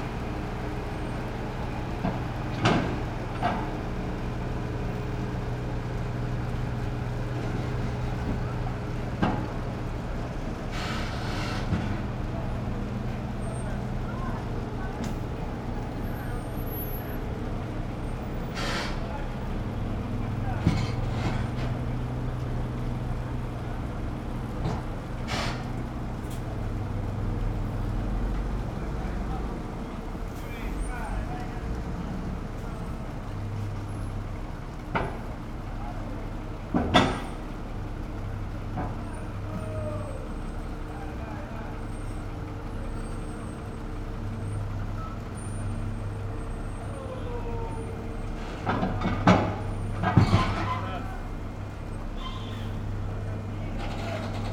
lipari harbour - cars leaving ship
cars leaving nave ferry boat